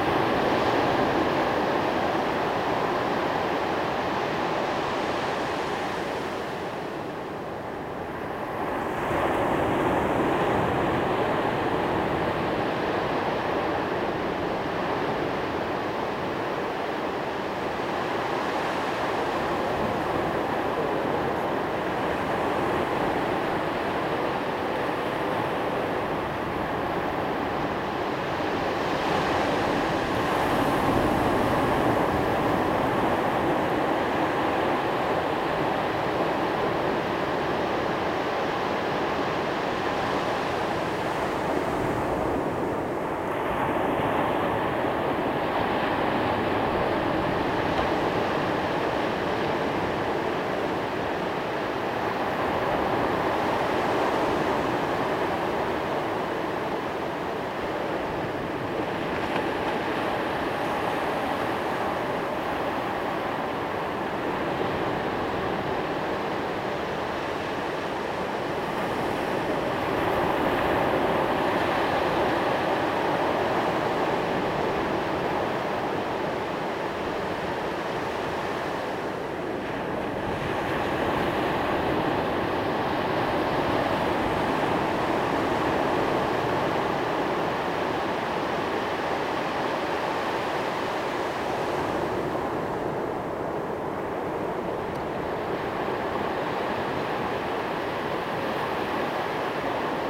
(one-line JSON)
{"title": "Centro Comercial Oasis, P.º del Faro, Maspalomas, Las Palmas, Spain - Waves on the beach", "date": "2021-12-11 16:17:00", "description": "Just the very soft white-noise sound of waves on the beach on our holiday last December. Found myself wondering where this recording was, and remembering the peace of just sitting by the sea and listening to its sighs. It was an amazing sunset at 5pm and we waited and watched while the last of the light sank away behind the waves. It was a holiday, so no fancy heavy equipment - just my trusty EDIROL R-09, still going strong.", "latitude": "27.74", "longitude": "-15.59", "altitude": "7", "timezone": "Atlantic/Canary"}